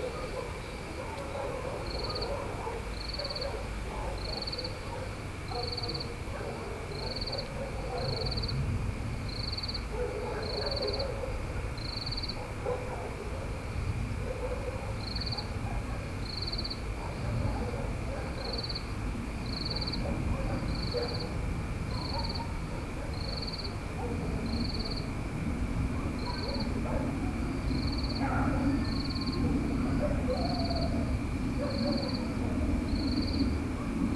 Malindela, Bulawayo, Zimbabwe - Night song
Malindela night song